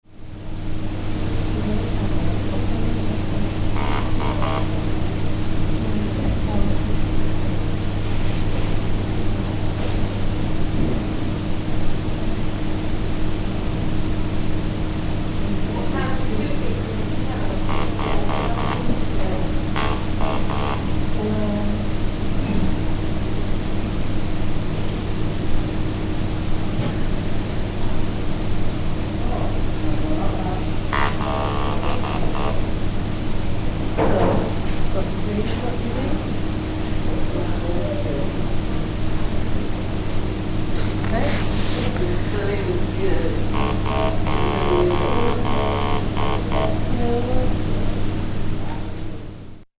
Weston Park Hospital, Sheffield UK - Radiotherapy Waiting Hall
Radiotherapy waiting Hall. Electrolarynx speech in background.
South Yorkshire, UK